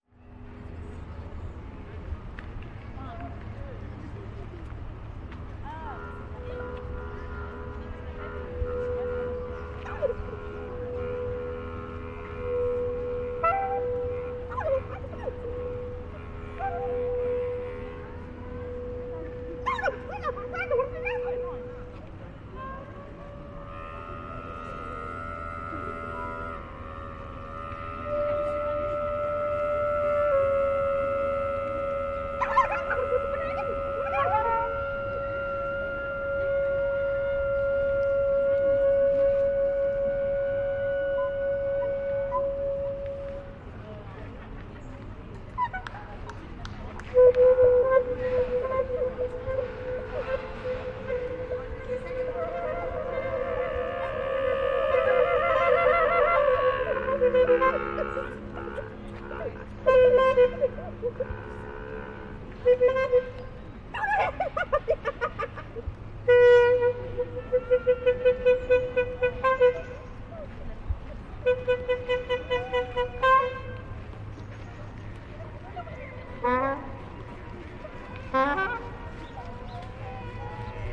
no number, Rue Hector Berlioz, Grenoble, Francia - Audience influencing sonic materials
Recording during Itinérances Sonores #1
Auvergne-Rhône-Alpes, France métropolitaine, France, October 2020